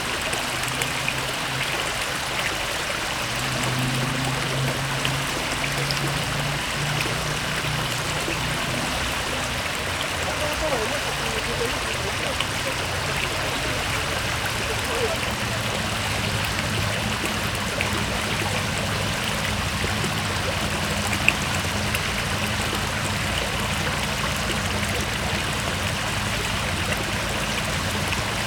Orléans, fontaine Place d'Arc (haut droite)
Fontaine en escalier à Place d'Arc, Orléans (45-France)
(haut droite)